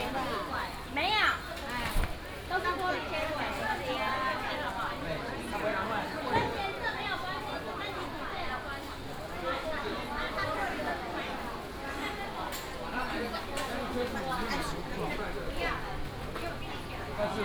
Fenglian St., Xinfeng Township - In the alley
In the alley inside the traditional market, vendors peddling, Binaural recordings, Sony PCM D100+ Soundman OKM II
Xinfeng Township, Hsinchu County, Taiwan, 26 August 2017, 08:25